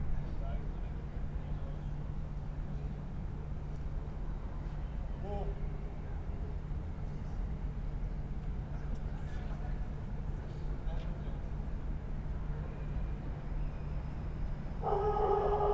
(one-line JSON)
{"title": "Schiffbauerdam, Berlin, Deutschland - Government quarter, Sunday evening ambience", "date": "2021-05-23 21:05:00", "description": "Berlin, Schiffbauerdamm, Government quarter, between buildings, river Spree, Sunday evening after the relaxation of Corona lockdown rules\n(SD702, DPA4060)", "latitude": "52.52", "longitude": "13.38", "altitude": "33", "timezone": "Europe/Berlin"}